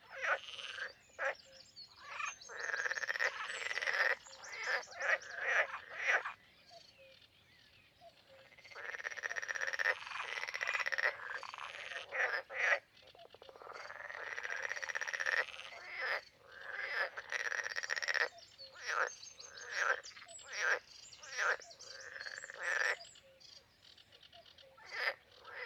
Saint-Omer, France - Étang du Romelaëre - Clairmarais
Étang du Romelaëre - Clairmarais (Pas-de-Calais)
Ambiance matinale
ZOOM H6 + Neumann KM184
Hauts-de-France, France métropolitaine, France, 23 May, 05:30